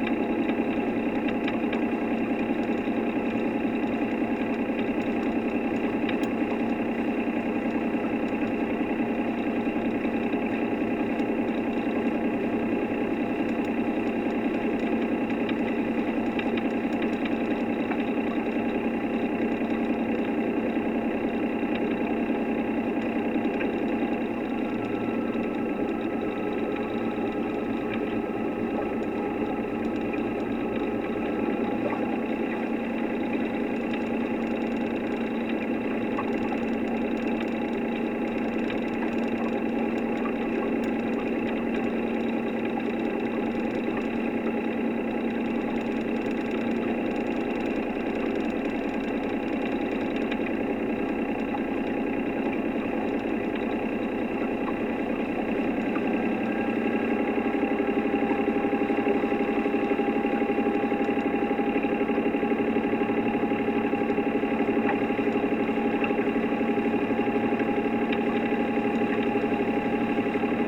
sloter meer: boat ride - the city, the country & me: boat ride on the sloter meer
contact mic on hull
the city, the country & me: july 28, 2012
Friesland, Nederland, 28 July 2012